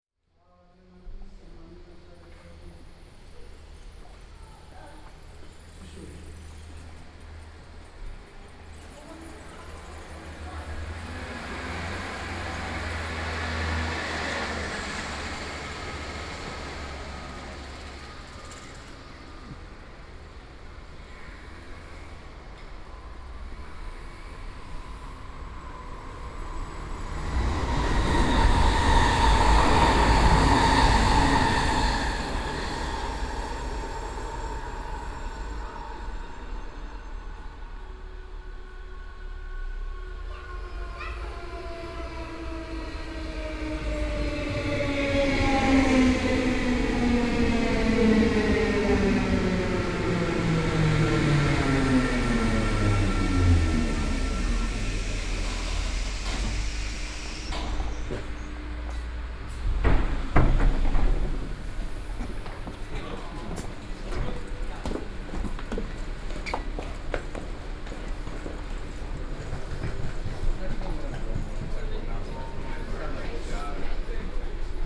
tunneltje station de Vink
station de Vink, aankomst sprinter
trainstation de Vink, arrival stoptrain
Voorschoten, The Netherlands